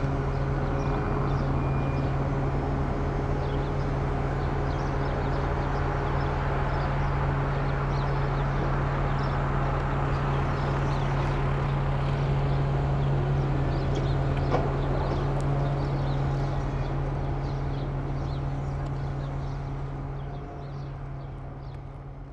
Berlin: Vermessungspunkt Maybachufer / Bürknerstraße - Klangvermessung Kreuzkölln ::: 02.03.2011 ::: 09:47